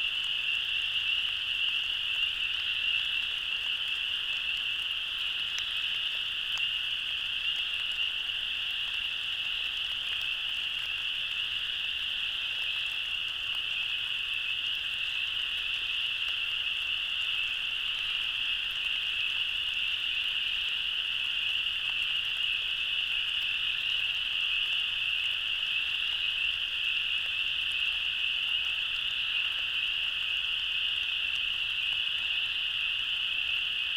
Lunsford Corner, Lake Maumelle, Arkansas, USA - Middle of night frog & insect drone in Ouachita forest
Excerpt (1 am, March 19, 2020) from a 14 hour long recording made in this location using MikroUsi (Lom) mic pair attached to a tree (head-spaced) about 40 cm above ground, into a Sony A10 recorder (128 Gb micro-SD card) powered by an Anker power bank (USB connector). This is about a 10 minute period during a light rain, with cricket frogs, spring peepers (frogs), other frogs, crickets and other insects calling constantly. The entire forest is reverberating with these sounds in all directions, creating a blend of hundreds (or thousands) of sounds that drone on all evening and all night. When I was there setting up the recorder, the frogs where so (painfully) loud that I wore headphones as ear protection.
Arkansas, United States of America, 19 March, ~01:00